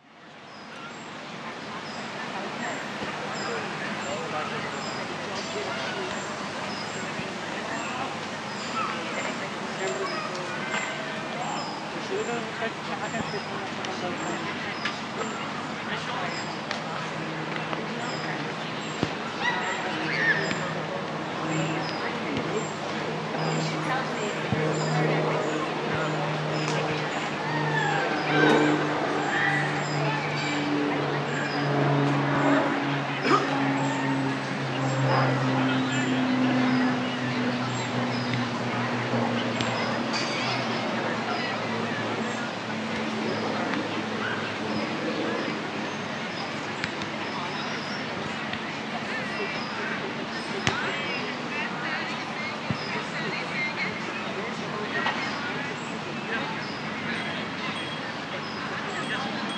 Unnamed Road, Belfast, UK - Botanic Gardens-Exit Strategies Summer 2021
Recording of people engaged in a conversation, children running and shouting around, strollers being pushed, sports activities being played in the green space, bicyclists and their bells, and a calm natural setting with the bird chirps.